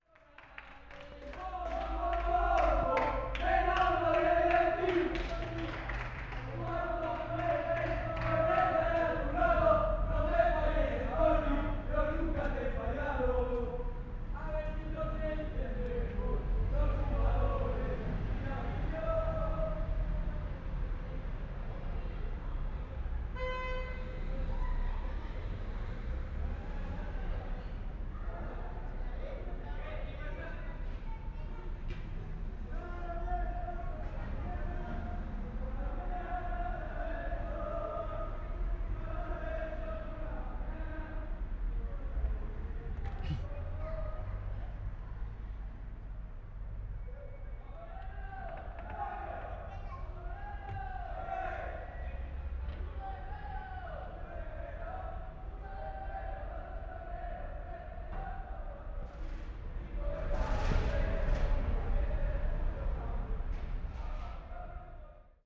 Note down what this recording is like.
singing guys in the streets of Gijon